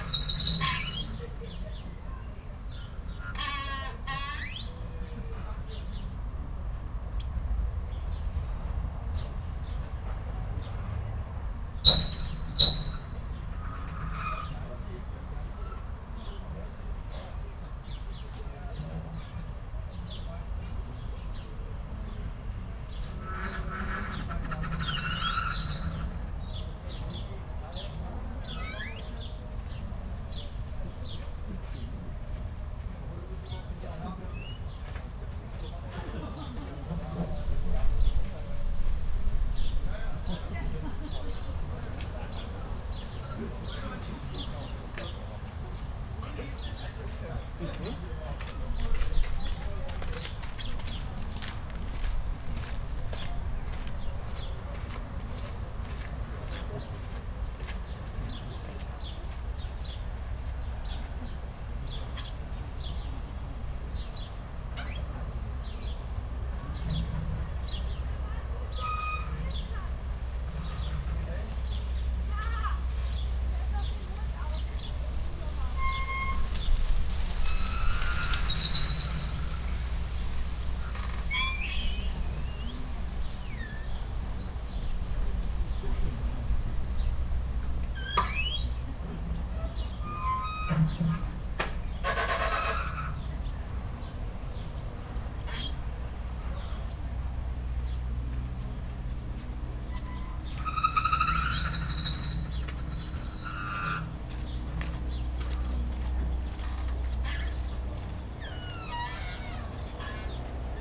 Köpenick, Freiheit15, sitting outside having a coffee, listening to a squeaking old ship moved by wind and waves.
(Sony PCM D50, DPA4060)
Berlin, Germany